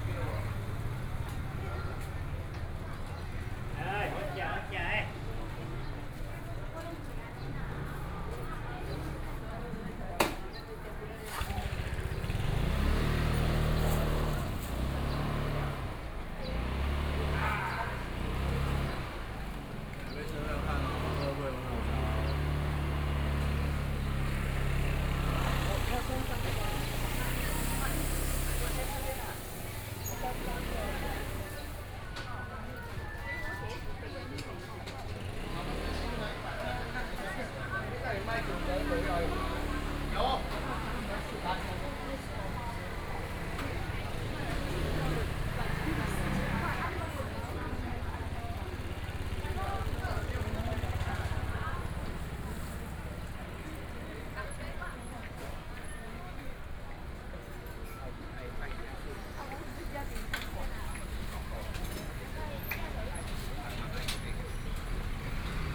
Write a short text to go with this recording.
Walking through the market, walking in the Street, Traffic Sound, To the east direction